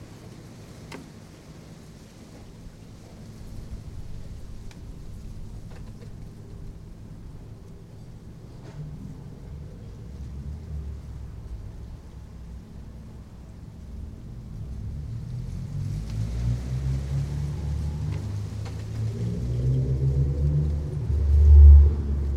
Chickerell, Dorset, UK - East St, Chickerell, 8am on WLD
world listening day, WLD
18 July 2012